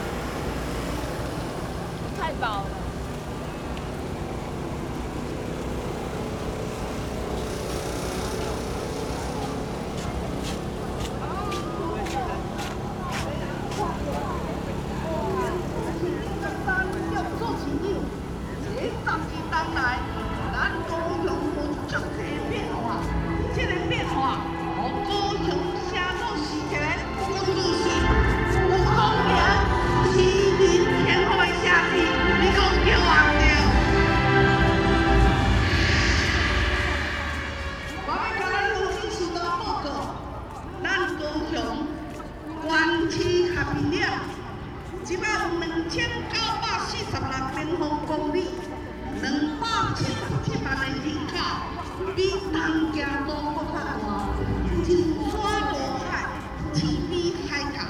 Beiping E. Rd., Zhongzheng Dist., Taipei City - Opposition election party
Opposition election party, Rode NT4+Zoom H4n
中正區 (Zhongzheng), 台北市 (Taipei City), 中華民國, 8 January, ~21:00